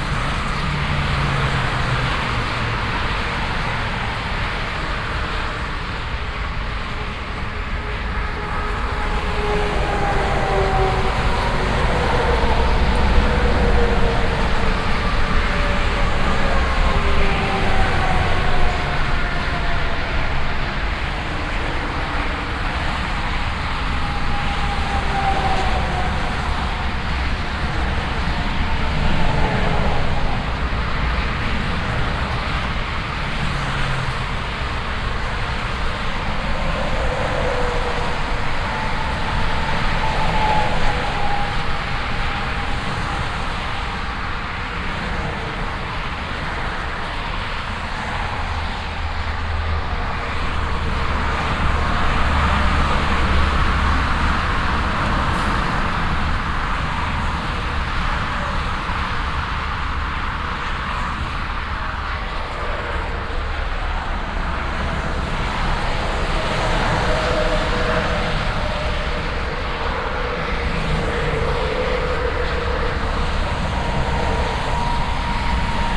cologne, merheim, traffic on highway a3
soundmap nrw: social ambiences/ listen to the people in & outdoor topographic field recordings